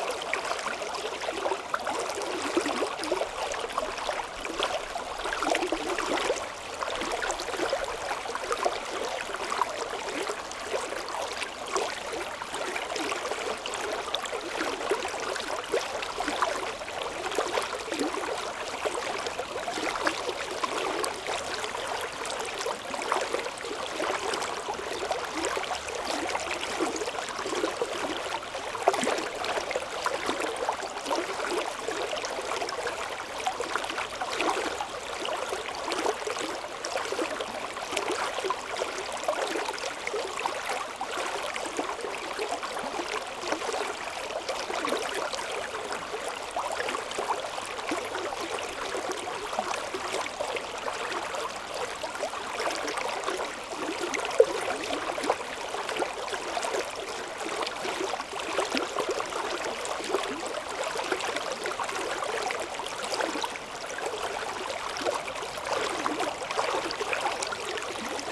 Mont-Saint-Guibert, Belgique - The river Orne
Recording of the river Orne, in a pastoral scenery.
Recorded with Lu-Hd binaural microphones.
Mont-Saint-Guibert, Belgium